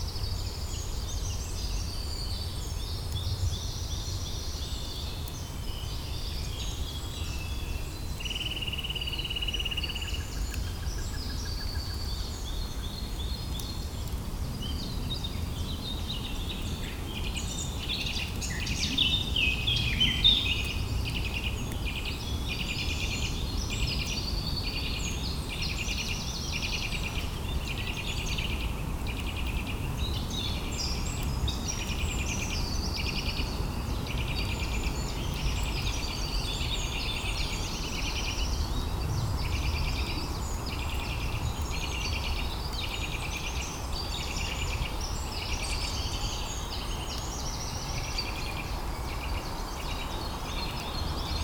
Spring time ambiance in a timberland, distant sound of forest birds as Common Chaffinch and Common Chiffchaff.
Court-St.-Étienne, Belgium, April 2018